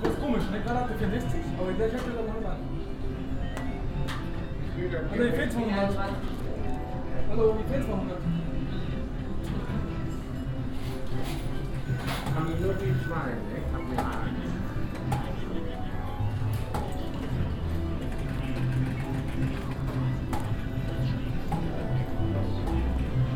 Another game hall atmosphere - here crowded with gamblers who all play kinds of electronic card games.
Projekt - Stadtklang//: Hörorte - topographic field recordings and social ambiences
Essen, Germany